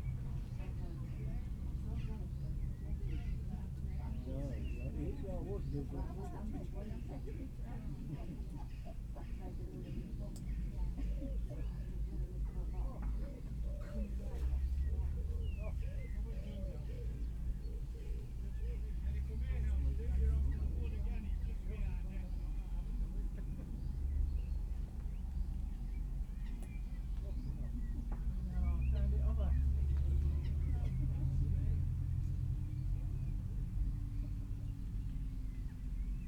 Bridlington Rd, Driffield, UK - sledmere v walkington bowls match ...
sledmere v walkington veterans bowls match ... recorded from the shed ... open lavalier mics clipped to a sandwich box ... initially it was raining ... 13 minutes in and someone uses the plumbing ...